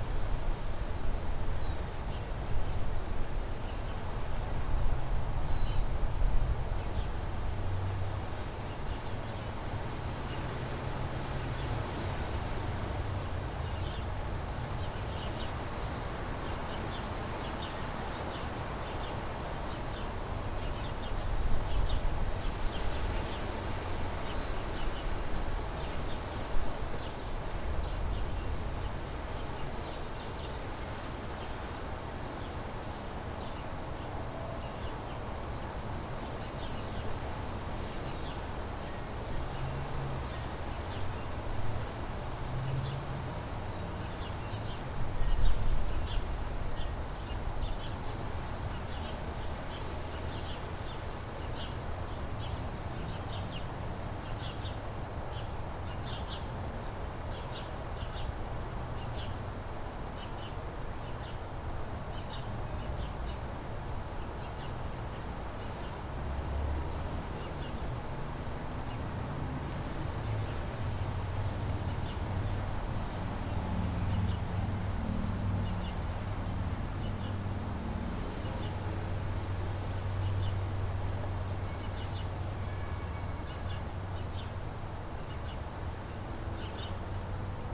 Emerald Dove Dr, Santa Clarita, CA, USA - Birds & Wind
From the backyard. A stereo mic and two mono mics mixed together.